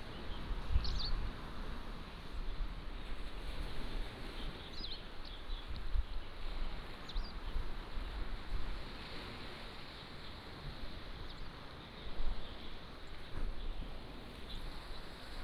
Small pier, Sound of the waves, Birds singing